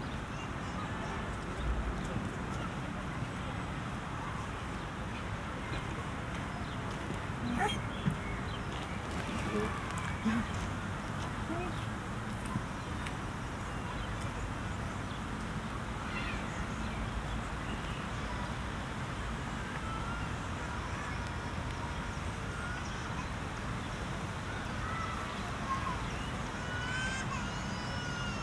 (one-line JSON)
{"title": "Bempt, Forest, Belgique - Empty footbal playground", "date": "2022-06-10 16:10:00", "description": "Birds, distant cars, tramways, sirens, a child crying, a few bikers.\nTech Note : Ambeo Smart Headset binaural → iPhone, listen with headphones.", "latitude": "50.80", "longitude": "4.31", "altitude": "26", "timezone": "Europe/Brussels"}